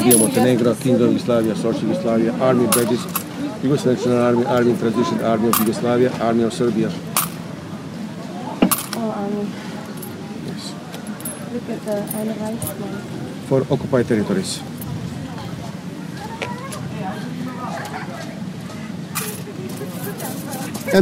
Kalemegdan, (Coin seller) Belgrade - Prodavac novcica, (Coin seller)
June 2011